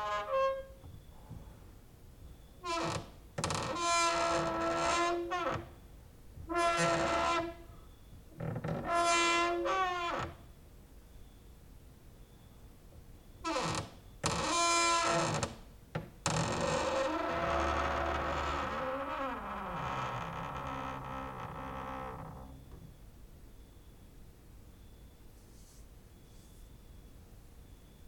cricket outside, exercising creaking with wooden doors inside